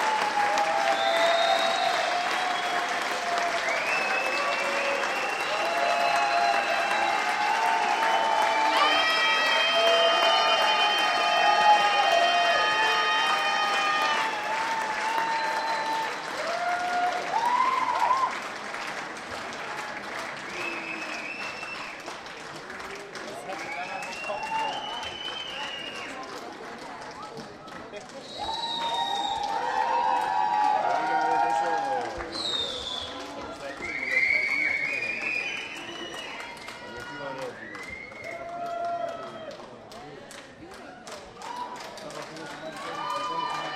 berlin, rosa-luxemburg-platz: volksbühne - the city, the country & me: daniel johnston performs at volksbühne
daniel johnston performs his song "devil town" and gets a standing ovation
the city, the country & me: november 2, 2008
26 November 2008, ~19:00